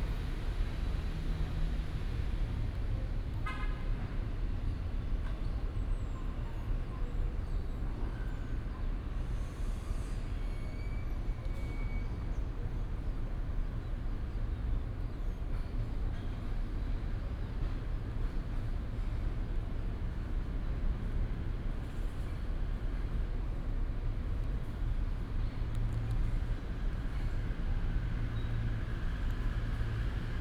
錦安公園, Lishui St., Da’an Dist., Taipei City - in the Park
in the Park, Bird calls, traffic sound, Distance came the sound of construction